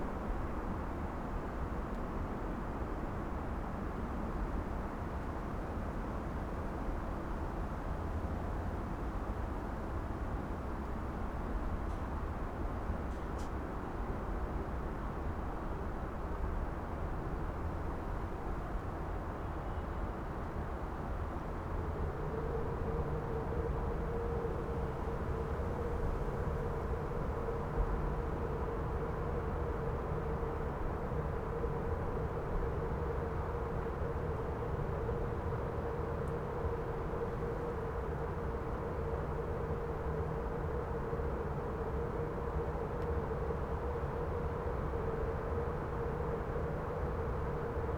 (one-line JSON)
{"title": "Bismarckstr., Cologne, Germany - railroad embankment, passing trains", "date": "2012-12-04 22:00:00", "description": "the whole area is dominated by the sound of trains, freight trains and their echoes can be heard all night, in the streets and backyards.\n(Sony PCM D50)", "latitude": "50.94", "longitude": "6.93", "altitude": "56", "timezone": "Europe/Berlin"}